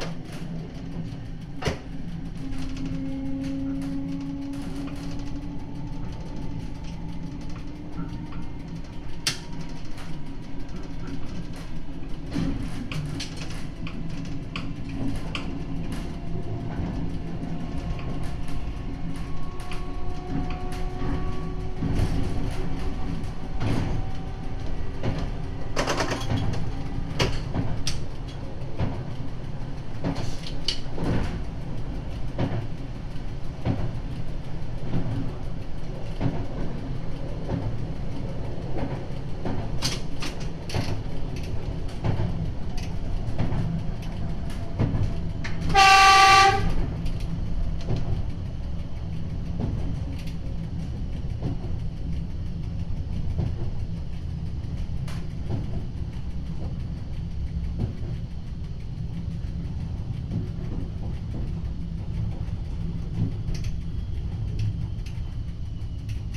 A ride in the driver's cabin of a Belgian AM62 electric railcar going from Mons to Nimy. Binaural recording with Zoom H2 and OKM earmics.
Mons, Belgium